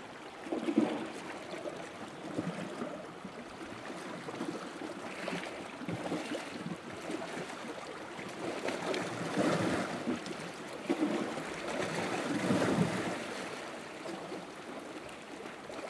Very calm sea waves breaking into a crack in the rocks and disappearing. This place was covered in a sloppy black seaweed which I think dampened the impact of the waves on the rocks.
(Zoom H4n internal mics)
North Somerset, UK, 25 April 2015, ~15:00